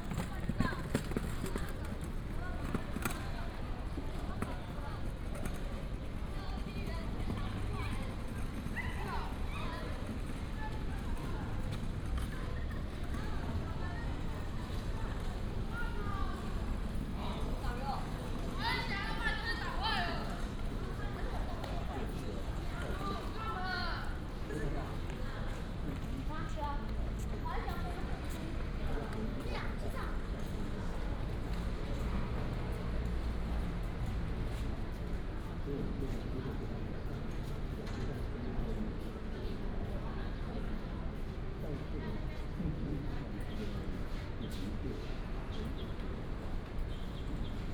National Museum of Natural Science, 台中市 - In the square
In the square